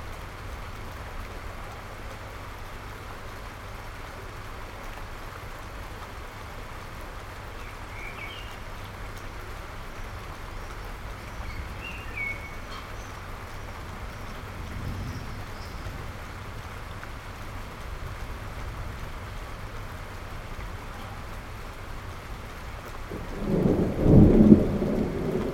Van Wesenbekestraat, Antwerpen, Belgium - Rain and thunder
Recording of a summer thunderstorm in Antwerp.
MixPre6 II with mikroUši Pro.
Vlaanderen, België / Belgique / Belgien, 4 June 2021, ~16:00